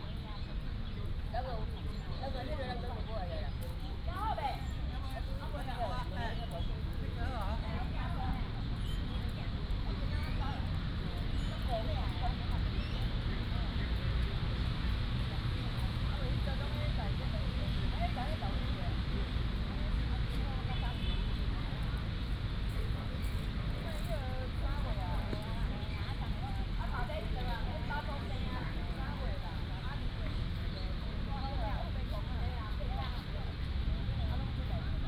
水萍塭公園, Tainan City - Old man and swing
in the Park, Old man chatting, Children play area, swing sound
18 February 2017, ~15:00